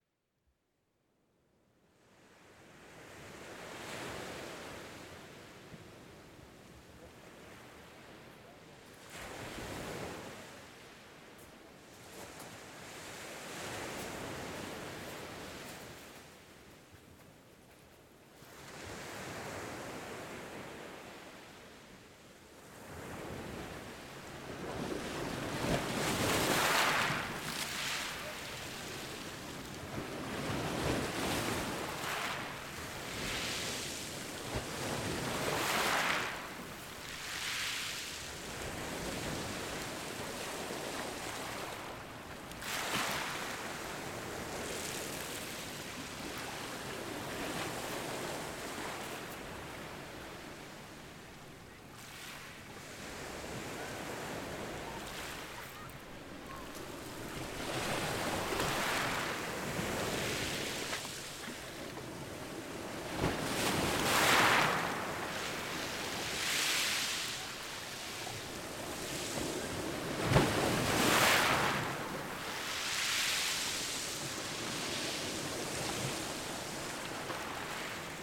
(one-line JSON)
{"title": "Six-Fours-les-Plages, France - Ile des Embiez", "date": "2019-05-30 15:10:00", "description": "Ile des Embiez - plage\nambiance\nZOOM H6", "latitude": "43.07", "longitude": "5.78", "altitude": "17", "timezone": "Europe/Paris"}